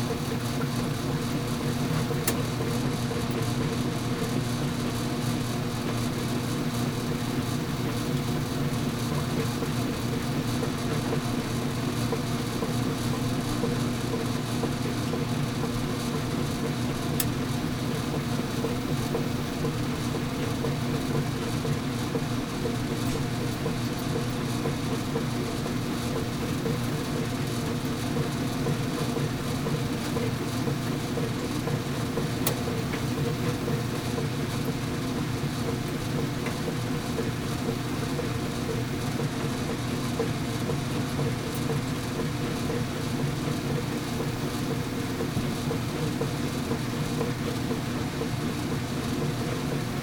East Austin, Austin, TX, USA - Woodshop Laundry
Recorded with a pair of DPA 4060s and a Marantz PMD661.